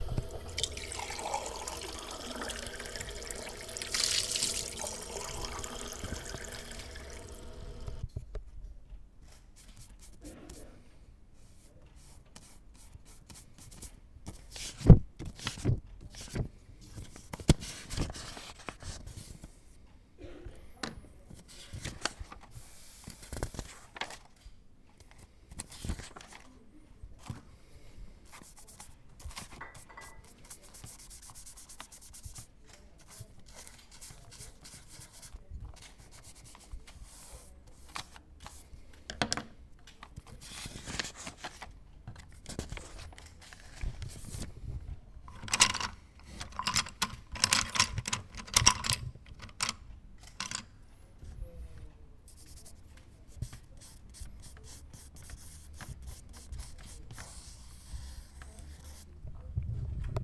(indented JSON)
{
  "title": "Saint-Nazaire, France - Ecole d'Arts a drawing class",
  "date": "2015-11-17 20:26:00",
  "description": "Une séance de dessin, la préparation des outils de travail.",
  "latitude": "47.27",
  "longitude": "-2.21",
  "altitude": "13",
  "timezone": "Europe/Paris"
}